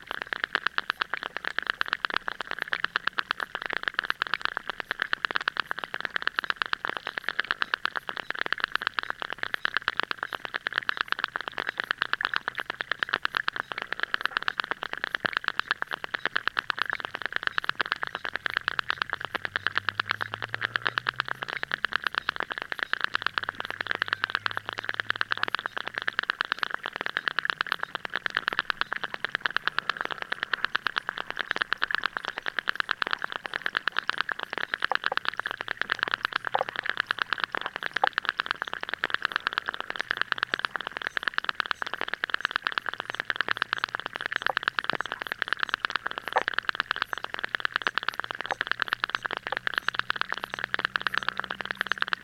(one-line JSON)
{"title": "Kelmė, Lithuania, underwater rhythms", "date": "2019-07-23 13:15:00", "description": "underwater recording in city's pond", "latitude": "55.63", "longitude": "22.94", "altitude": "114", "timezone": "Europe/Vilnius"}